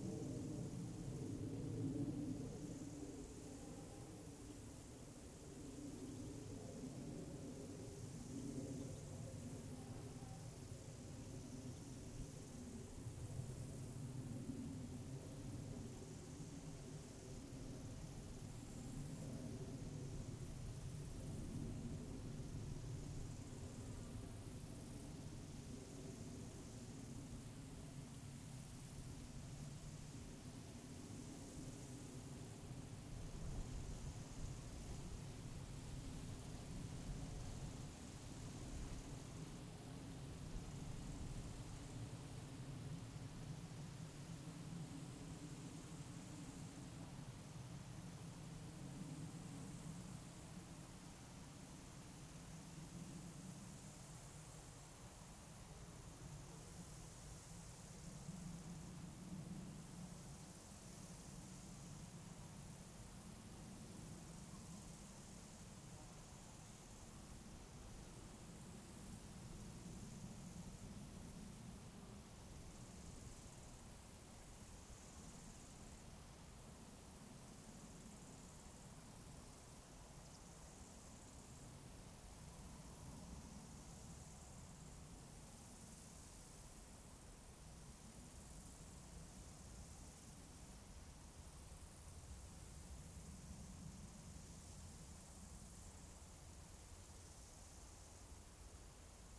Unnamed Road, Žalany, Česko - Field near Milešovka hill
Summer field sounds. Birds, grasshoppers, wind blows. Airplane passes over.
Zoom H2n, 2CH, handheld.
Ústecký kraj, Severozápad, Česko, 30 July 2019